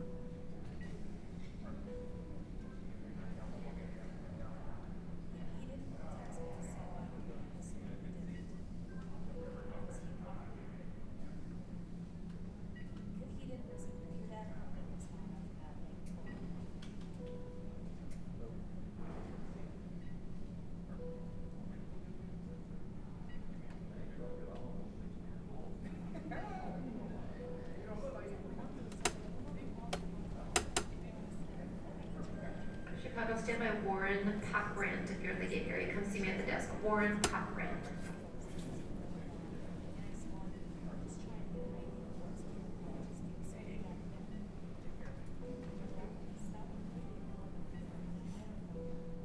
MSP Airport Terminal 2 - Terminal 2 Gate H12
The sounds of Gate H12 in Terminal 2 at the Minneapolis St Paul International Airport